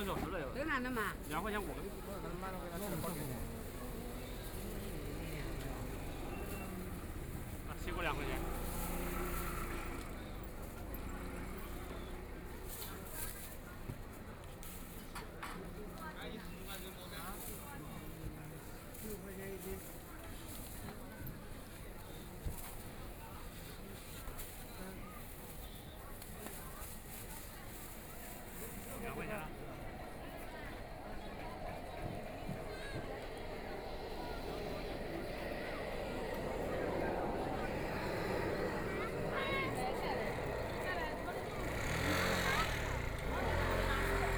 Guangqi Road, Shanghai - in the market
Walking through the Street, Traffic Sound, Walking through the market, Walking inside the old neighborhoods, Binaural recording, Zoom H6+ Soundman OKM II
Shanghai, China, 29 November, 10:05